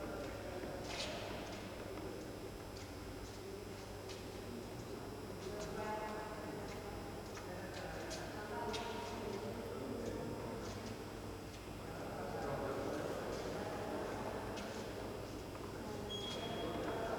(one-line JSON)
{"title": "Berlin Klosterstr - quiet subway station", "date": "2009-12-06 22:15:00", "description": "sunday night quiet subway station. buzz and beeps of lamps and electric devices. people talking. steps.", "latitude": "52.52", "longitude": "13.41", "altitude": "39", "timezone": "Europe/Berlin"}